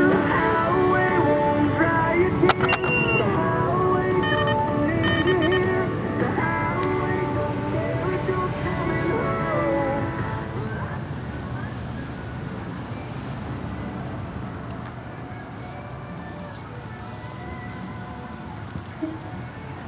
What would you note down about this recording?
Had to go inside for a receipt for diesel. Music blaring at pump and in the store. Lots of road noise and ice pellets on windshield sounds like static.